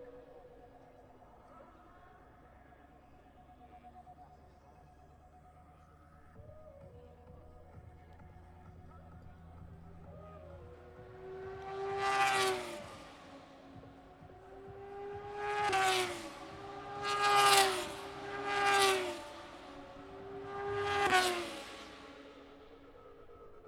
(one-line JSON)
{"title": "Towcester, UK - british motorcycle grand prix 2022 ... moto two ...", "date": "2022-08-06 10:55:00", "description": "british motorcycle grand prix 2022 ... moto two free practice three ... zoom h4n pro integral mics ... on mini tripod ... plus disco ...", "latitude": "52.08", "longitude": "-1.02", "altitude": "158", "timezone": "Europe/London"}